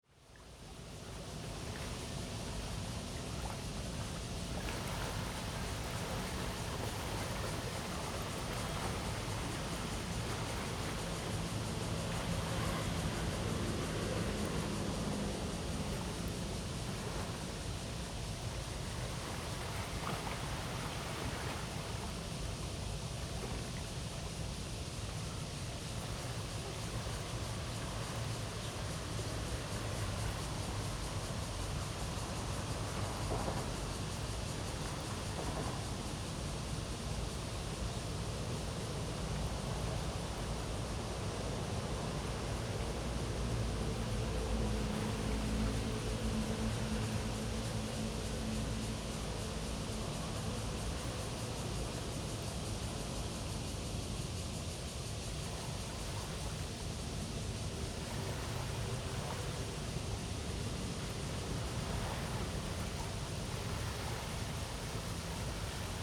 Tamsui River, New Taipei City - Sitting on the river bank
Sitting on the river bank, Cicadas cry, The sound of the river
Zoom H2n MS+XY